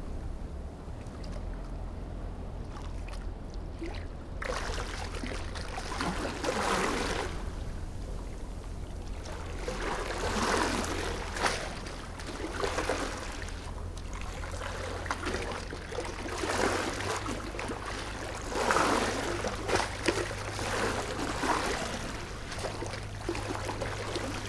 岐頭村, Baisha Township - Waves and tides
Sound of the waves, Small beach, Tide
Zoom H6 +Rode NT4